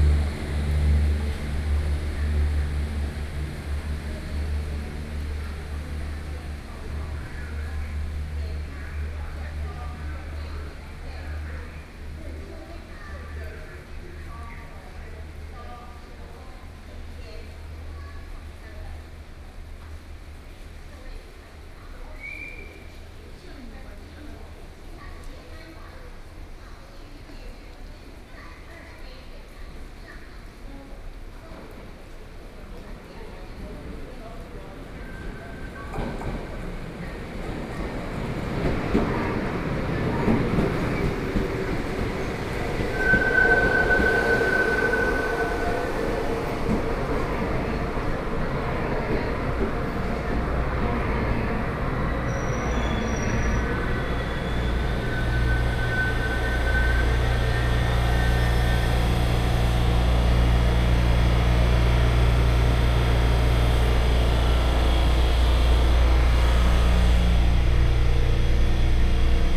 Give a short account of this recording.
On the platform, Train traveling through, Trains arrive at the station, Binaural recordings, Zoom H4n+ Soundman OKM II